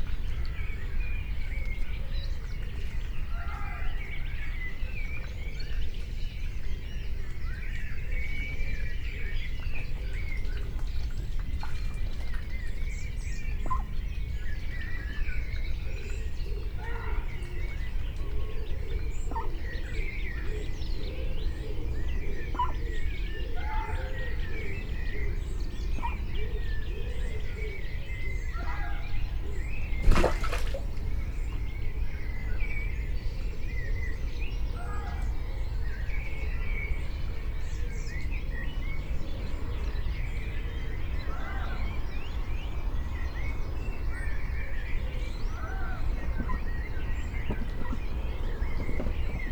Just before dawn and part of an overnight recording. In the forground a female mallard and her 6 ducklings vocalise and disturb the pond water. A muntjac calls in the background from the slopes of the Malvern Hills. 2 minutes from the end mice are heard running around the microphones and distant traffic begins the day. This is an attempt to use longer clips to provide an experience of the recording location.
MixPre 6 II with 2 Sennheiser MKH 8020s. The ducks are 10ft away and the muntjac half a mile from the microphones which are on a wooden deck at the edge of the garden pond.
West Midlands, England, United Kingdom, 16 June, 03:53